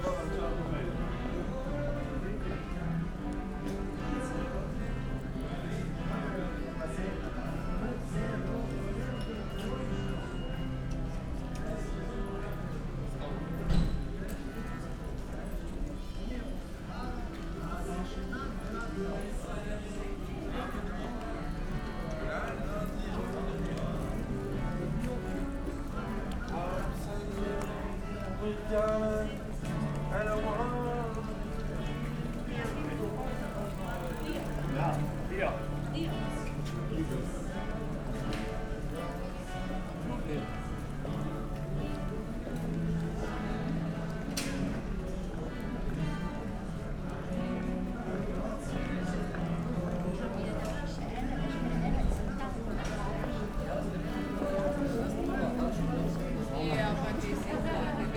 Maribor, Gosporska ulica - musicians competing
two musicians around the corner occupy the place with their songs, creating an strange mix
(SD702 DPA4060)
Maribor, Slovenia, 30 May 2012